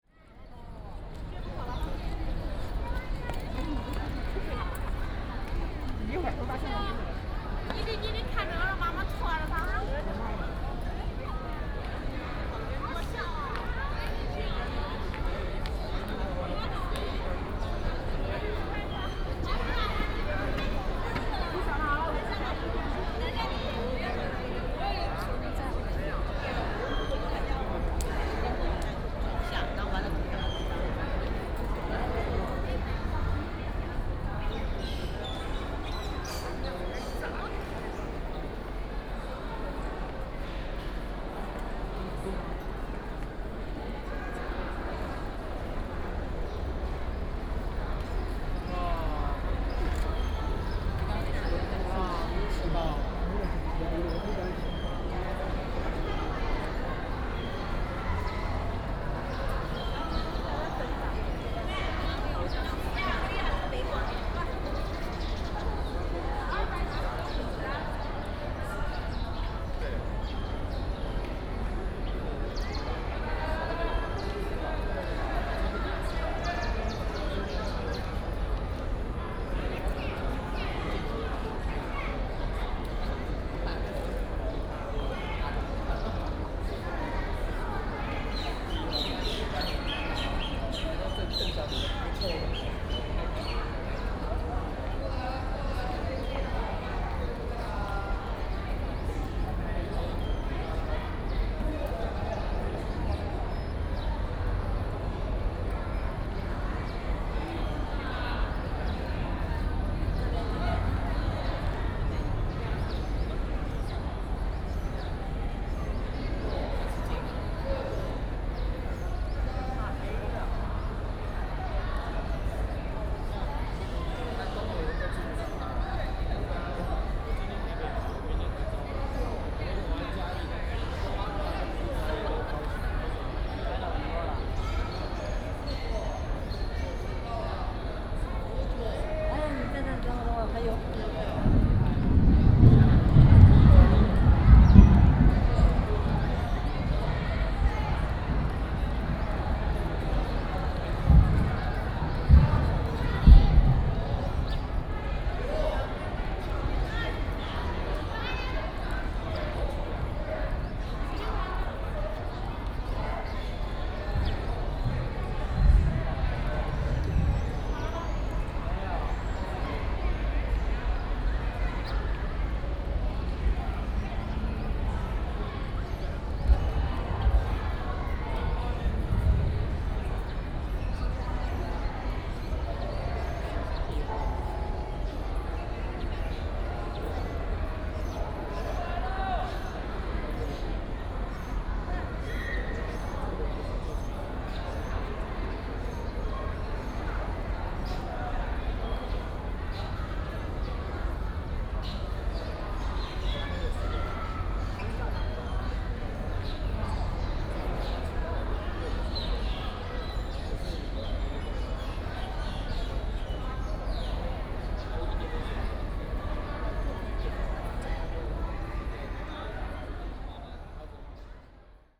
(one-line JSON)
{"title": "National Dr. Sun Yat-sen Memorial Hall, Taiwan - Sightseeing", "date": "2015-06-22 15:17:00", "description": "A lot of Chinese tourists, Sound of thunder, Very hot weather, Bird calls", "latitude": "25.04", "longitude": "121.56", "altitude": "12", "timezone": "Asia/Taipei"}